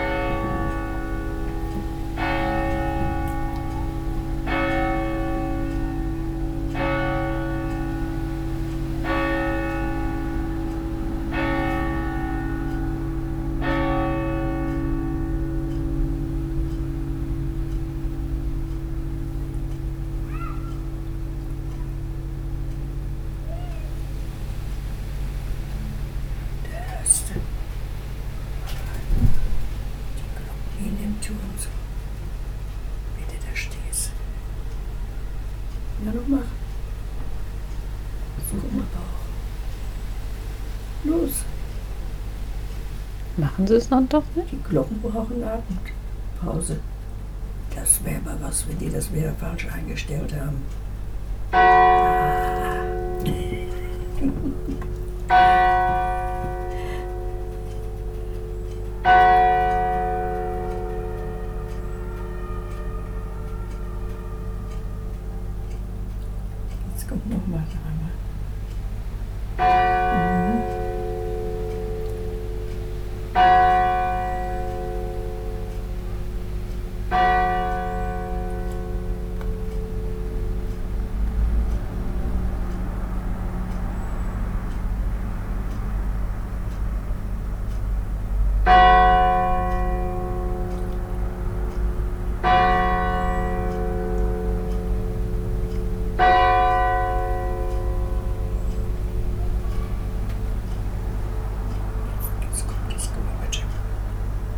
Josef Str, Hamm, Germany - Angelus - Der Engel des Herrn...
… one sound features strongly, and comes in live… (it’s the traditional call for the prayer called “Angulus” in the Catholic Church; it rings at 7am, 12 noon and 7 pm)...